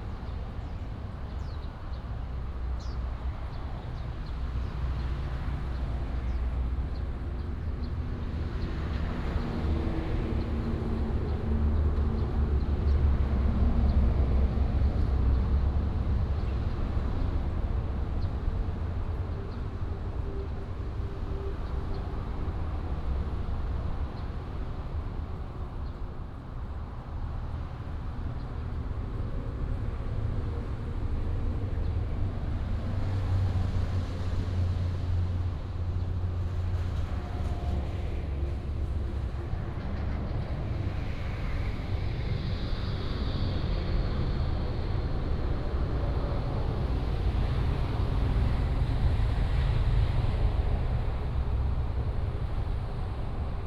Next to the highway, sound of the birds, Traffic sound